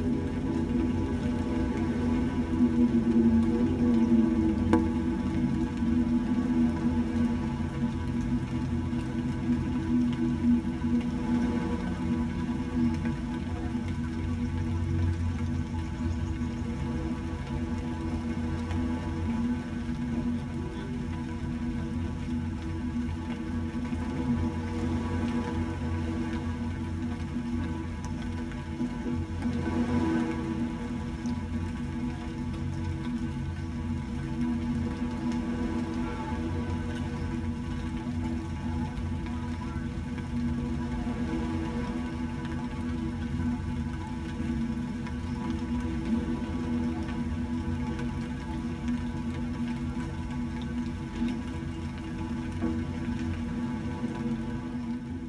slight rain on the beach recorded in a short tube
(zoom h2, okm)